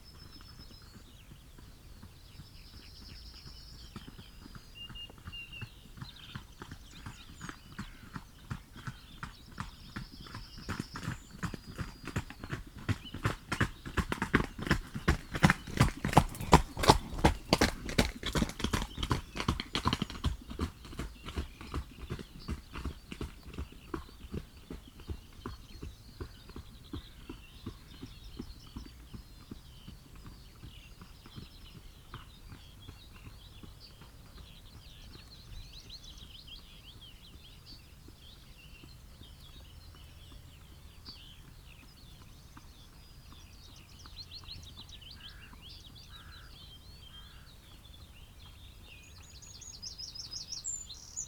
Luttons, UK - up and down a country lane ... on a horse ...
up and down a country lane ... on a horse ... recording near a willow warbler nest ... and got this as well ... open lavalier mics clipped to a sandwich box ... bird calls and song from ... wren ... crow ... yellowhammer ... skylark ... song thrush ... linnet ... blackbird ...